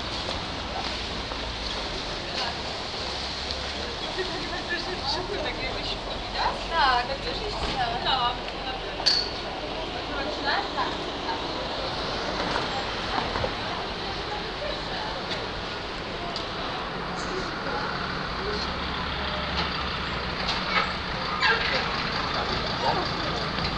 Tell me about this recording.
At the entrance to supermarket.